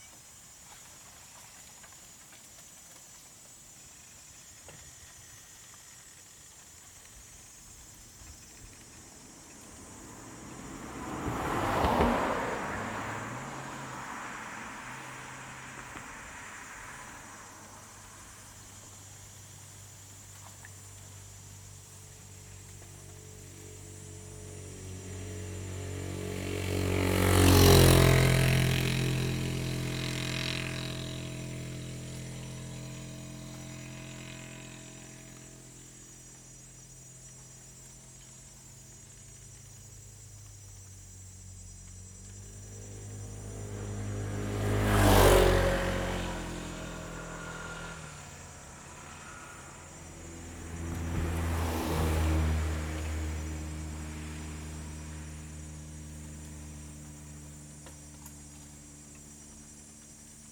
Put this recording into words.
In the side of the road, Traffic Sound, Small village, Bus station, Very hot weather, Zoom H2n MS+ XY